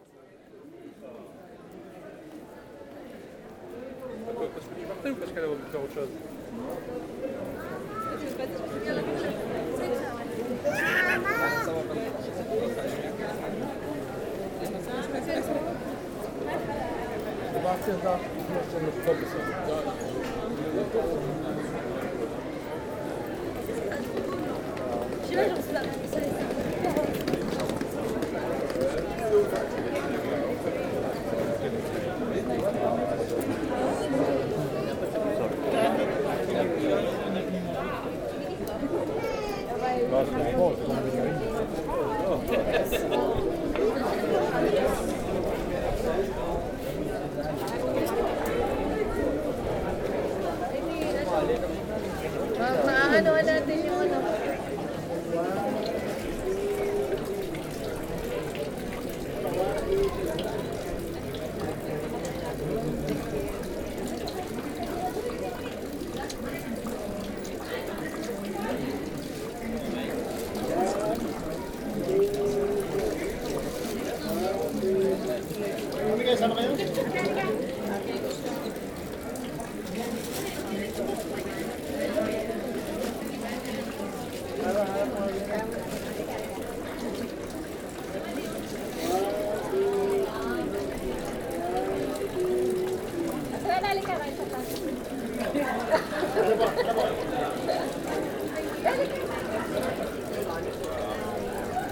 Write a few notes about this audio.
Brussels, the very famous Manneken-Pis, a statue of a baby pissing. You must be Belgian to understand, perhaps ! The same sound as everybody ? Yes probably, the place is invariable !